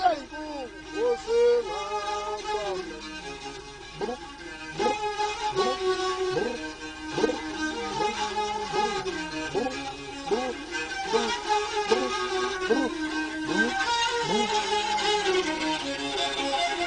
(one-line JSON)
{"title": "Botanic Gardens, Durban, NoMashizolo traditional street busker", "date": "2009-04-28 15:44:00", "description": "NoMashizolo traditional street busker in Durban KwaZulu Natal", "latitude": "-29.85", "longitude": "31.01", "altitude": "30", "timezone": "Africa/Johannesburg"}